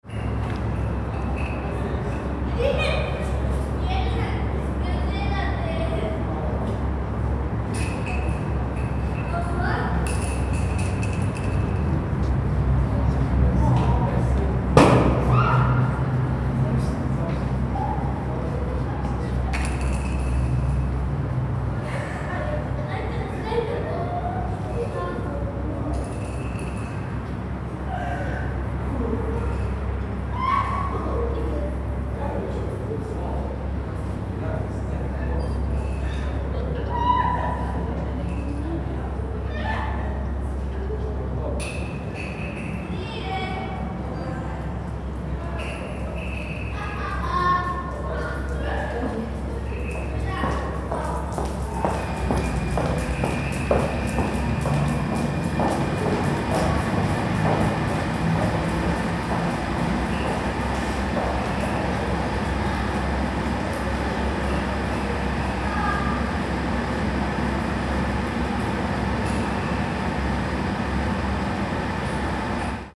Radio Rijeka, Rijeka, pedestrian passage
Pedestrian passage across/below building of Radio Rijeka.
1 June 2009, 18:20